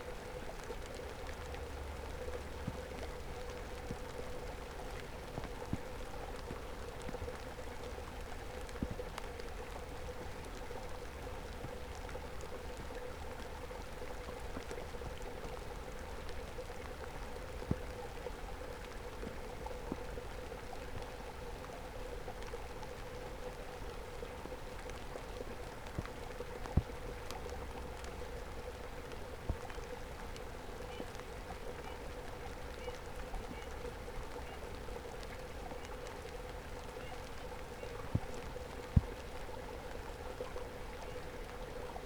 Lithuania, Pakalniai, rain amongst reeds
swamp, reeds, streamlet and autumnal rain
Utena, Lithuania